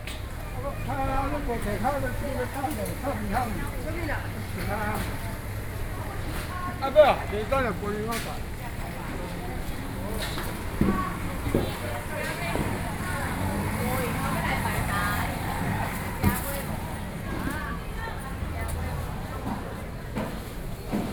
Zhongzheng Rd., Xizhi Dist., New Taipei City - Traditional markets